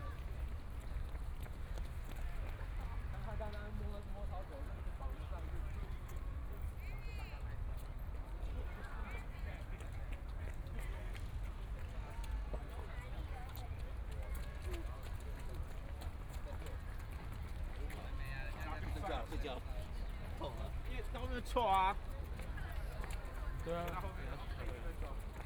新生公園, Taipei EXPO Park - Walking through the park
Walking through the park, Traffic Sound, Aircraft flying through, Jogging game, Binaural recordings, ( Keep the volume slightly larger opening )Zoom H4n+ Soundman OKM II